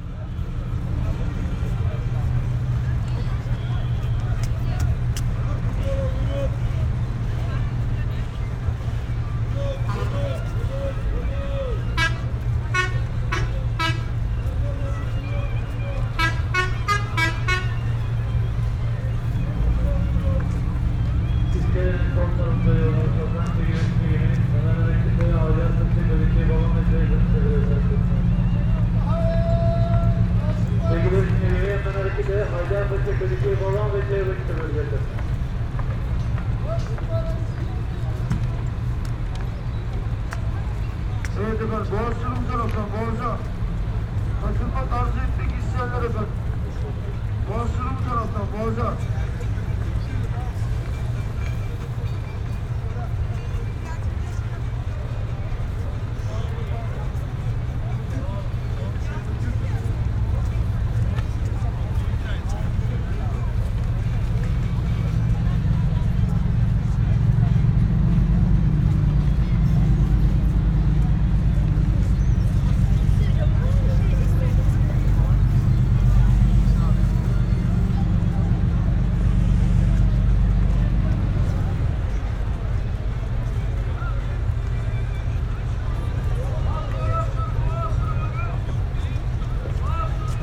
Bosporus tour boat and ferry terminal near the Galata Bridge